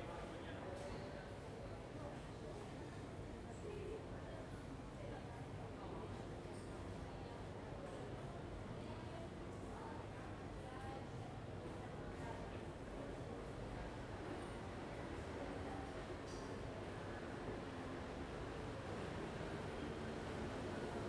Carrer Verdi, Barcelona, Spain - Slight rain and street
Recording made from a balcony. There's some slight rain at the beginning and then sounds from the street.
11 June 2015